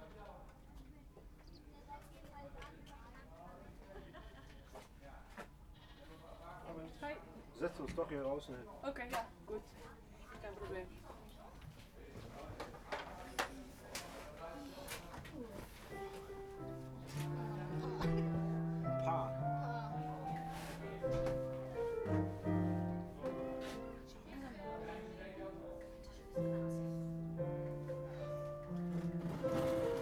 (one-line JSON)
{"title": "workum: camping site restaurant - the city, the country & me: sound check", "date": "2015-08-01 19:23:00", "description": "sound check of a band, some tourists on the terrace of the restaurant\nthe city, the country & me: august, 1", "latitude": "52.96", "longitude": "5.41", "timezone": "Europe/Amsterdam"}